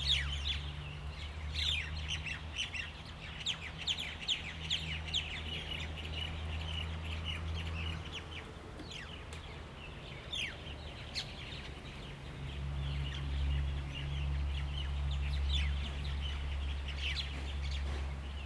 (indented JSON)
{
  "title": "太湖, Jinhu Township - Birds singing",
  "date": "2014-11-04 16:02:00",
  "description": "Birds singing, Traffic Sound\nZoom H2n MS +XY",
  "latitude": "24.44",
  "longitude": "118.42",
  "altitude": "25",
  "timezone": "Asia/Taipei"
}